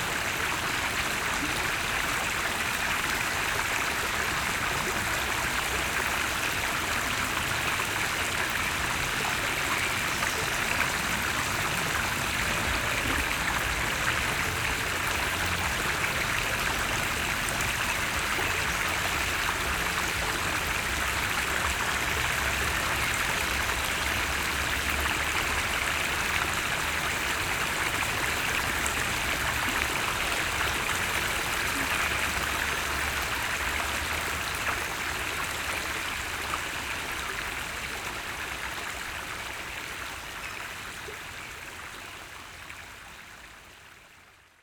La Hulpe, Belgique - Argentine river
The two rivers Argentine and Mazerine confluence.